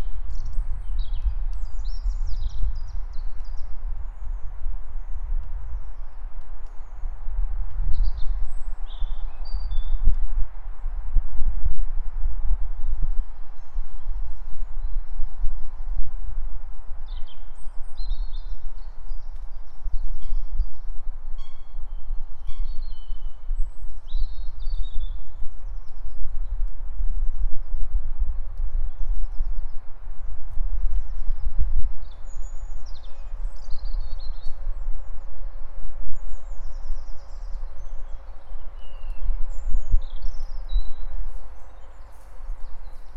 Birds at the Marlot PArk. Recorded with a Tascam DR100-MK3
23 April 2020, 13:31